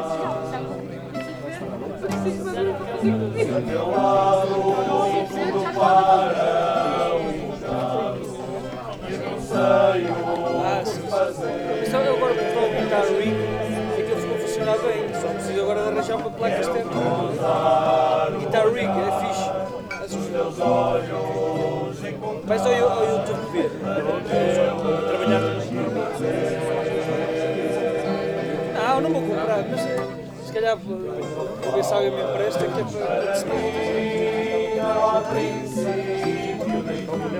jantar em Trás-os-Montes